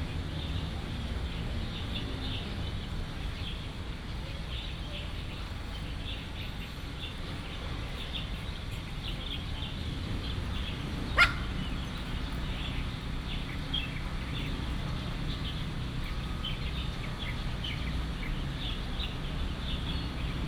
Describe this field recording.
Traffic sound, in the park, Construction sound, Many sparrows